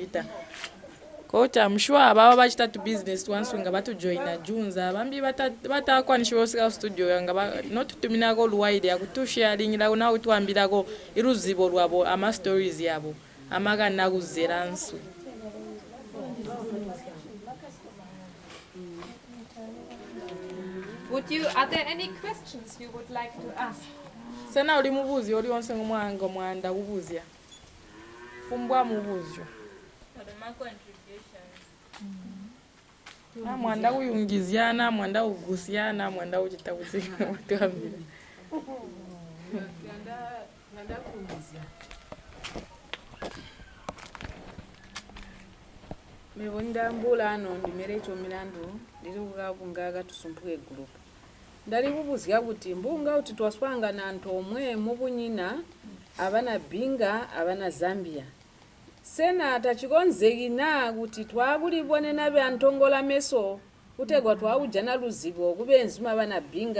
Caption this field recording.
...we are in the Lwiindi grounds meeting two local women groups, the Tusumpuke Saving group and the Nsenka Women’s Club. Mary Mwakoi introduced us to the women; Monica and Patience from Zongwe FM are making recordings for our upcoming live shows. The women present their projects and products; Claudia has brought greetings from the Zubo women across Lake Kariba, and a clash bag woven of Ilala Palm by the Binga women to introduce some of Zubo’s projects… here, one of the women from Nsenka responds with the wish that Zubo’s women should come for a visit across the Zambezi and teach them how to weave such bags…